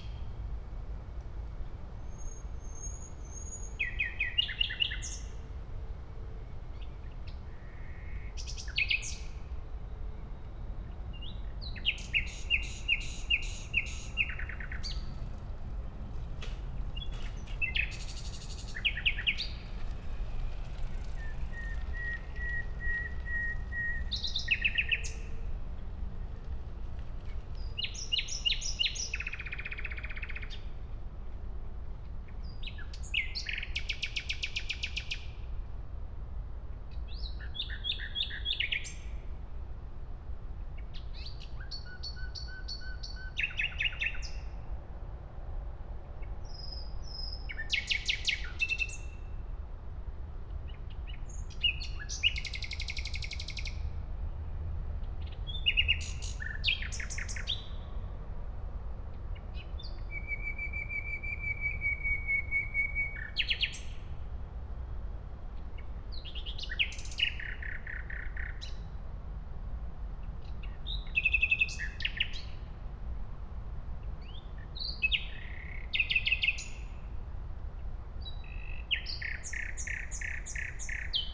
Gleisdreieck, Kreuzberg, Berlin, Deutschland - nightingale, city hum
lovely nighingale song at Gleisdreickpark / Technikmuseum, city hum with traffic and trains
(SD702, MKH8020 AB60)